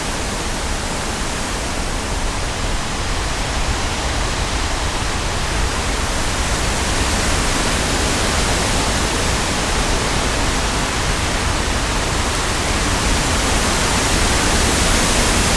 Recorded on a windy day in the forest at Sälsten, Härnösand. The recording was made with two omnidirectional microphones
Västernorrlands län, Norrland, Sverige, 18 September 2020, 14:35